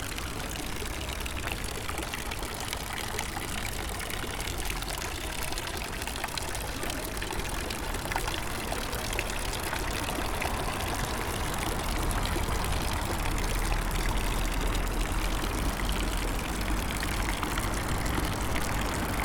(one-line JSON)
{"title": "Pl. des Thermes, Aix-les-Bains, France - Fontaine", "date": "2019-11-16 16:50:00", "description": "Autour de la fontaine d'eau potable près de l'Astoria, bruits de la circulation urbaine.", "latitude": "45.69", "longitude": "5.92", "altitude": "274", "timezone": "Europe/Paris"}